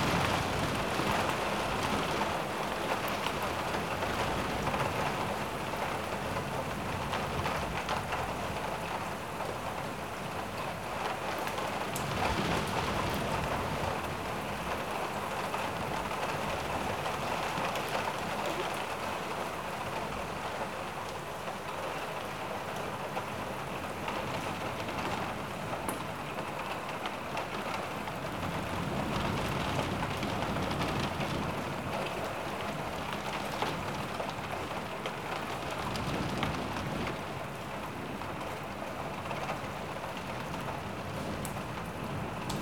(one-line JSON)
{"title": "Poznan, Mateckiego Street - cloudburst", "date": "2014-06-14 12:59:00", "description": "cloudburst on Saturday early afternoon. quite a commotion outside of the window. rain tumbling with wind. heavy drops banging on the window sill.", "latitude": "52.46", "longitude": "16.90", "altitude": "97", "timezone": "Europe/Warsaw"}